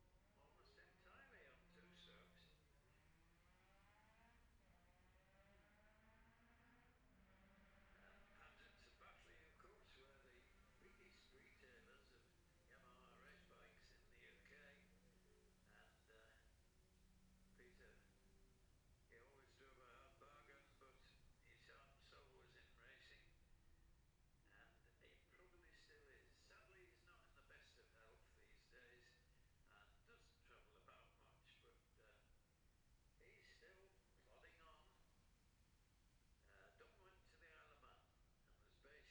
Jacksons Ln, Scarborough, UK - gold cup 2022 ... lightweights practice ...
the steve henshaw gold cup 2022 ... lightweight practice ... dpa 4060s clipped to bag to zoom h5 ...